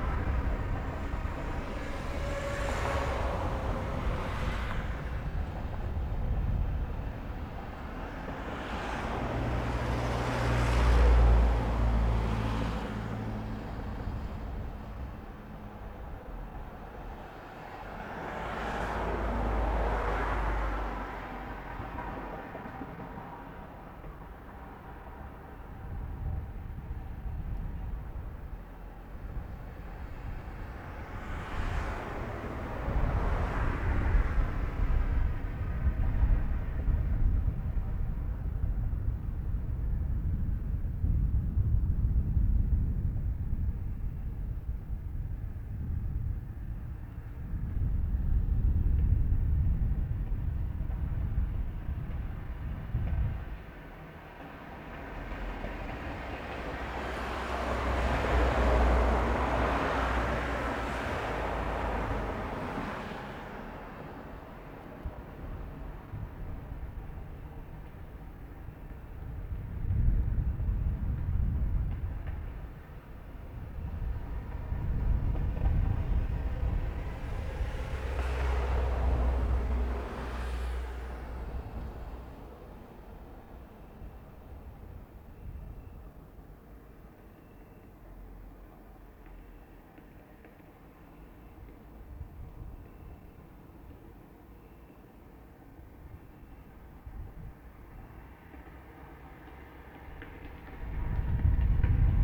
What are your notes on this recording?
The recording was done on the top of a unfinished bridge at the end of the city Chisinau. On that bridge often young folks come and hung around. In the recording are sounds of all sorts of cars, people talking here and there and some crickets a little later on. The recording was done with Zoom H6 (SSH-6).